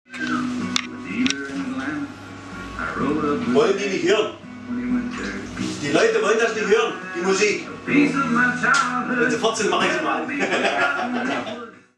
Western Saloon
western themed bar, America, DDR, fantasy, cowboys, cowgirls, beer, flags, wild west, DDR, Background Listening Post